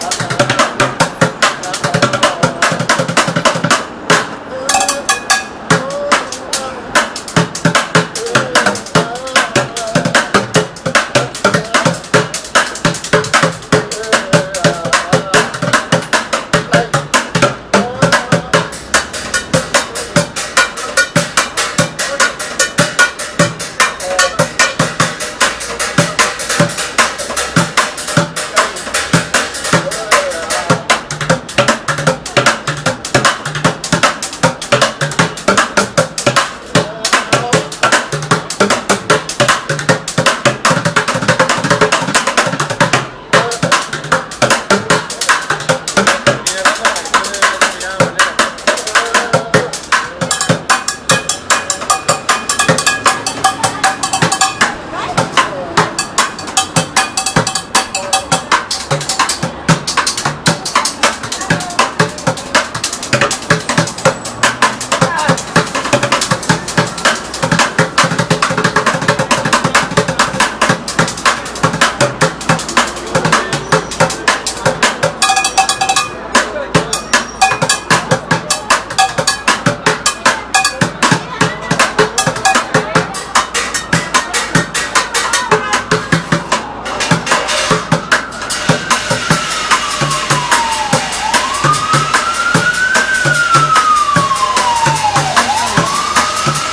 6th Ave and 35th Street
Pots and Pans Drummer on the corner of 6th and 35th. Bought him new sticks to play with, so talented.